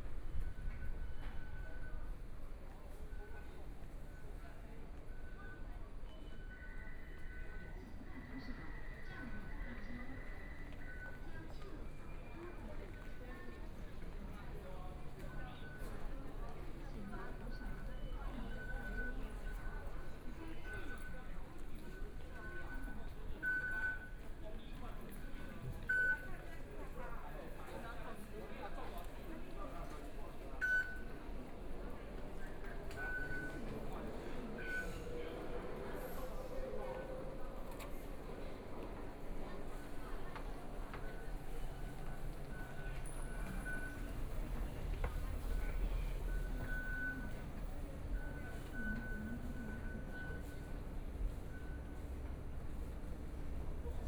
Shuanglian Station, Taipei - walking in the Station
walking in the Station, Binaural recordings, Zoom H4n+ Soundman OKM II
Taipei City, Taiwan, 6 February 2014, ~15:00